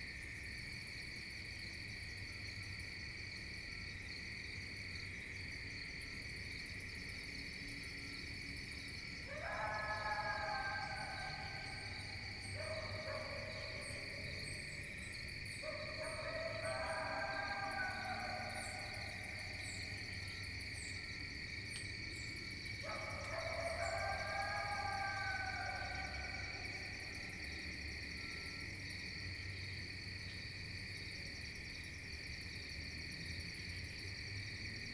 Edward G Bevan Fish and Wildlife Management Area, Millville, NJ, USA - distant coyote
A coyote soloed in the distance as I observed the Perseid meteor shower. (fostex fr-2le; at3032)